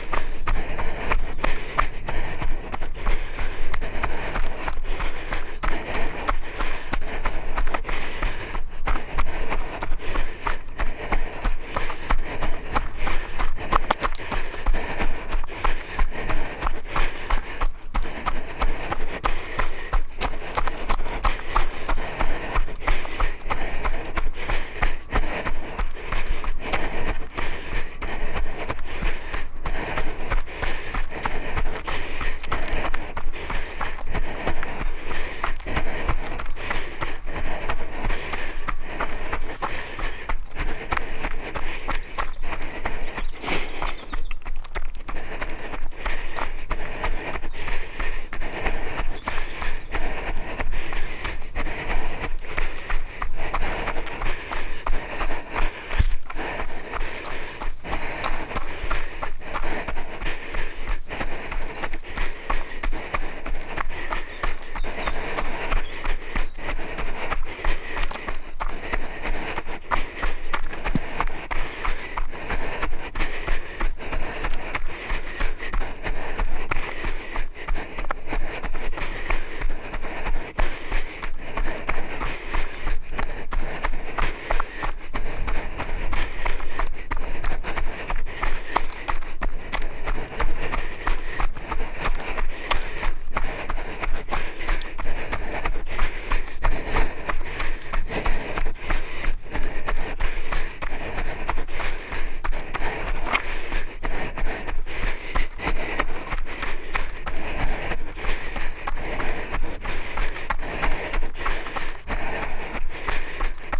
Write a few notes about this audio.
Rhianwen is carrying the recorder in one hand and in the other a length of plastic tube with one Beyer lavalier on the end just above her feet. The other lavalier is taped under the peak of her cap. She ran over 7k to produce this recording. Recorded on a Sound devices Mix Pre 3.